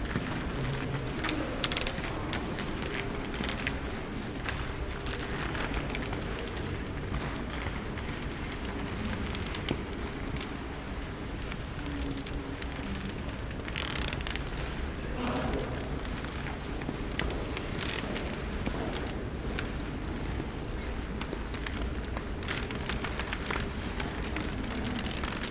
{"title": "parquet floor Kunst Historische Museum, Vienna", "date": "2011-06-03 16:05:00", "description": "wonderful creaky old parquet floor of this grand Museum", "latitude": "48.20", "longitude": "16.36", "altitude": "194", "timezone": "Europe/Vienna"}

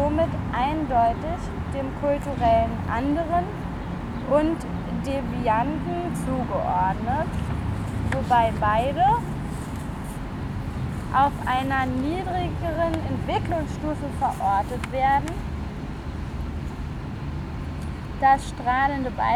{"title": "Str. des 17. Juni, Berlin, Deutschland - Lesegruppedololn XI Teil 1", "date": "2018-07-04 15:20:00", "description": "The reading group \"Lesegruppedololn\" reads texts dealing with colonialism and its consequences in public space. The places where the group reads are places of colonial heritage in Berlin. The text from the book \"Myths, Masks and Themes\" by Peggy Pieshe was read at the monument of Frederick I and Sophie Charlotte, who stands in colonial politics and the slave trade next to a 3-lane road.", "latitude": "52.51", "longitude": "13.33", "altitude": "37", "timezone": "Europe/Berlin"}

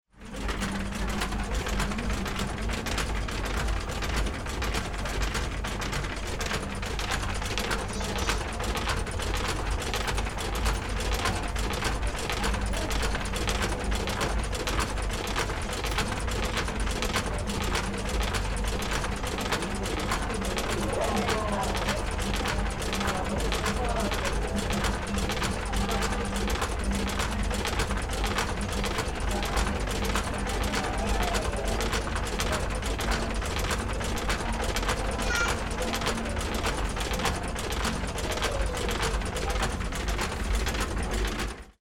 {"title": "Brighton Beach - Brighton Mechanical Puppet Theatre", "date": "2009-08-17 12:00:00", "description": "A mechanical puppet theatre outside a shop under the arches.", "latitude": "50.82", "longitude": "-0.14", "altitude": "4", "timezone": "Europe/London"}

